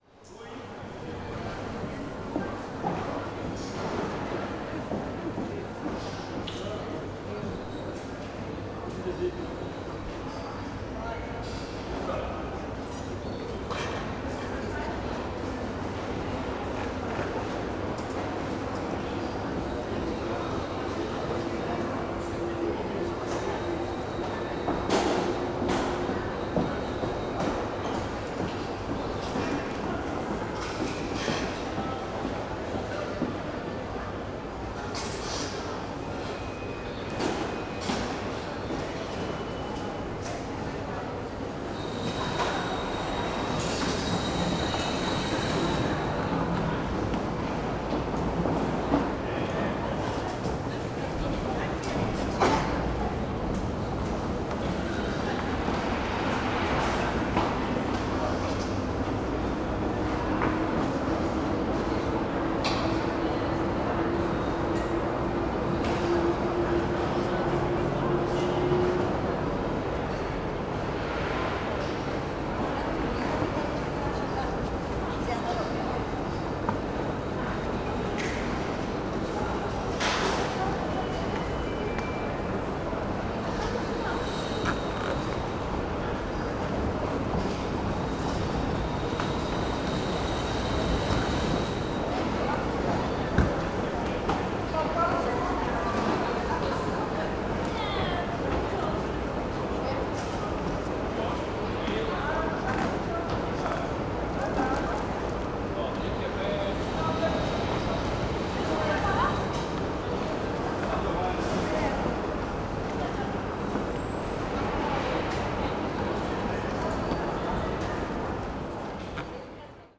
Lübeck airport, departure hall - security processing line
recorded around security lines. you can hear the crates with hand luggage being pushed on steel spools along the security tables. since the departure hall in Lübeck is only a huge tent sounds of roaring plane engines pour through the walls.
Lübeck Airport (LBC), Lübeck, Germany